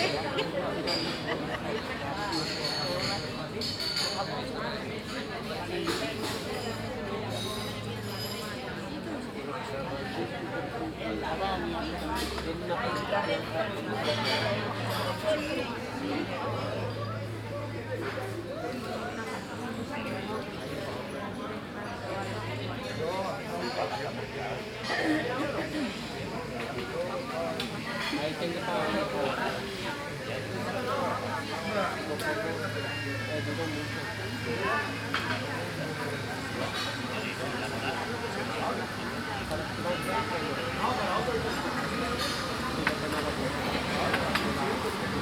Sevilla, Provinz Sevilla, Spanien - Sevilla - street cafe - morning atmosphere
At a street cafe on a sunday morning - the sound of people talking while having their breakfast - cars and motorcycles passing by.
international city sounds - topographic field recordings and social ambiences